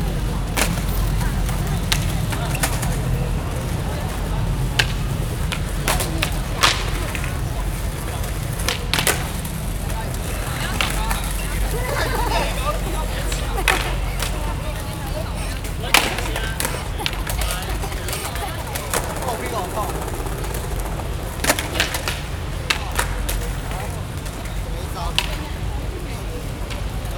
Wanhua, Taipei - Skateboard
A group of young people are skateboarding, Zoom H4n+AKG -C1000s